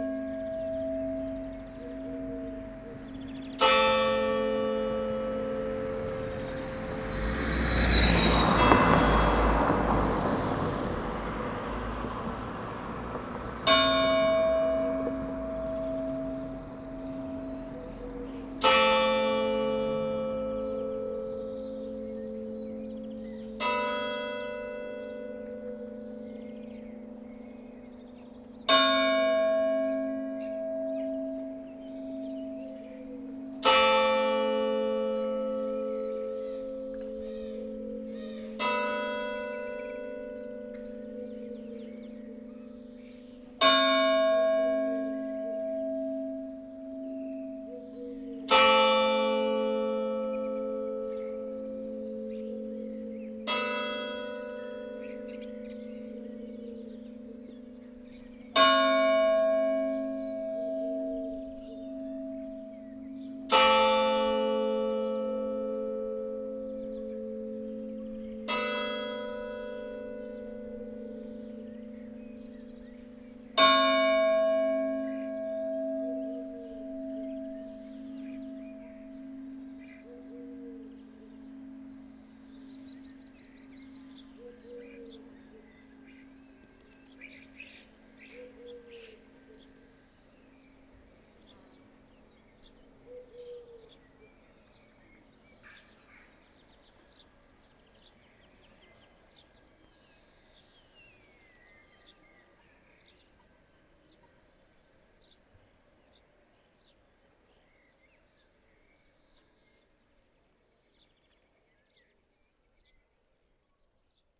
In the small village of Mellery, sound of the bells ringing. This is an uncommon manner to ring the bells, according to liturgy it means nothing.
This small village is the only one in Belgium to have a called "Hell road" and a "Paradise road" !
9 April 2017, ~17:00